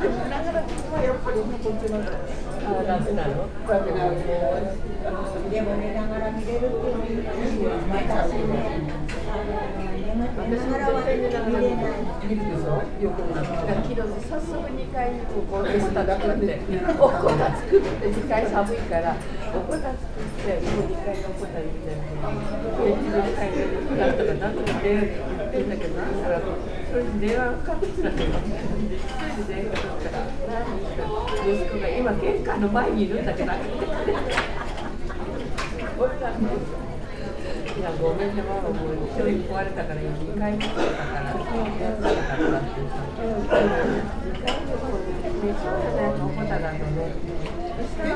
chome shinjuku, tokyo - coffe bar, ladies, chat